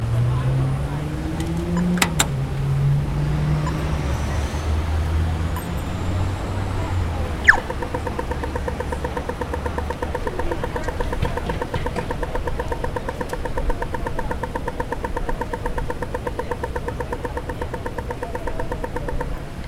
Civie Cintre Queen St Auckland city
Traffic light signal